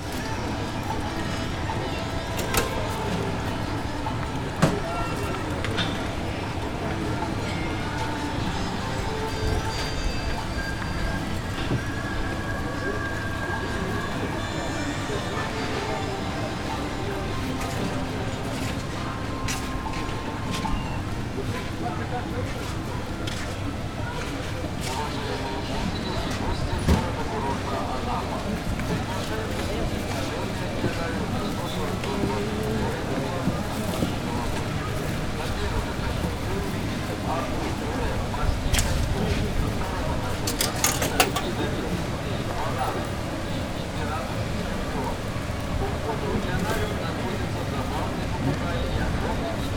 Sunny morning. Coke machines on one of the central streets of the city.
Tech.: Sony ECM-MS2 -> Tascam dr-680.
10 July 2013, ~10:00